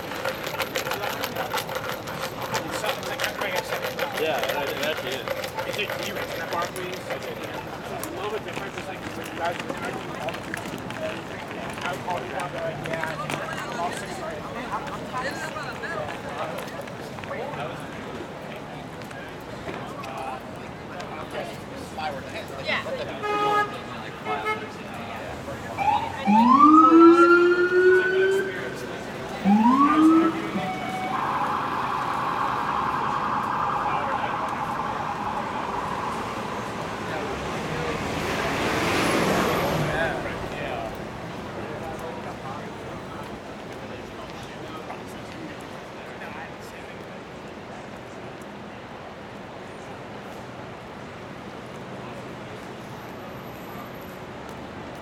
Lexington Ave, New York, NY, USA - Midtown Walk
Walking around Lexington Avenue.